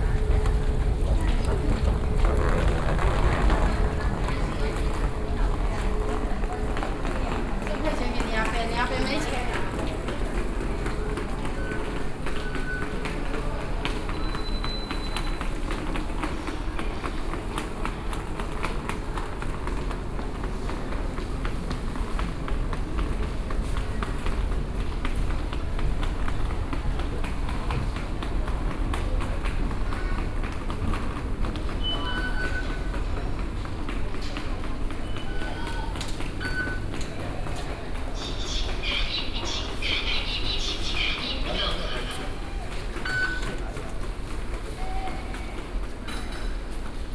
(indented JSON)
{
  "title": "Taipei, Taiwan - soundwalk -MRT station platform",
  "date": "2012-10-25 15:29:00",
  "latitude": "25.06",
  "longitude": "121.53",
  "altitude": "15",
  "timezone": "Asia/Taipei"
}